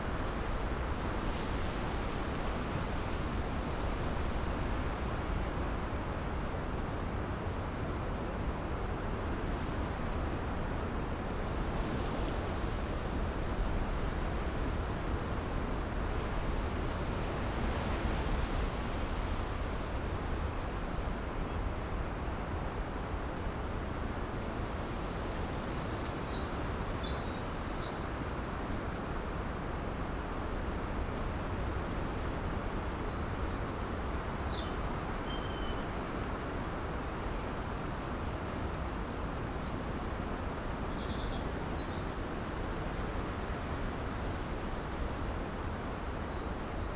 Ploumanach, France - Birds at sunset, sea and wind
Couché du soleil à Ploumanach oiseaux, mer et vent dans les arbres
Ploumanach, Sunset between the trees, waves and wind .It's Cold
/Oktava mk012 ORTF & SD mixpre & Zoom h4n
Perros-Guirec, France, 4 March 2015, ~23:00